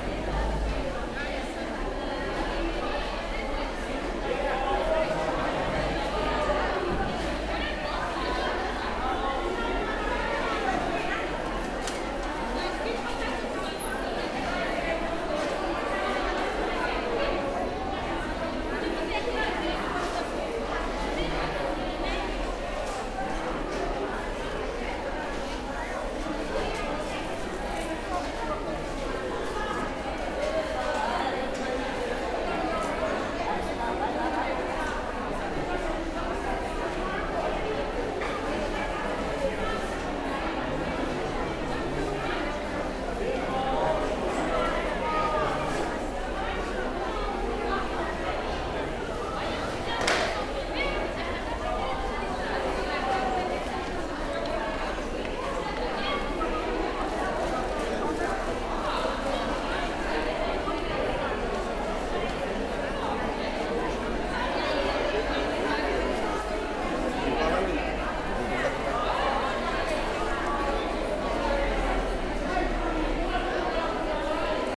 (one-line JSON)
{"title": "Lousã, Portugal, Market ambient", "date": "2011-06-20 12:05:00", "description": "Vegetable Market ambient, people walking and talking, shouting, general noises", "latitude": "40.11", "longitude": "-8.25", "altitude": "177", "timezone": "Europe/Lisbon"}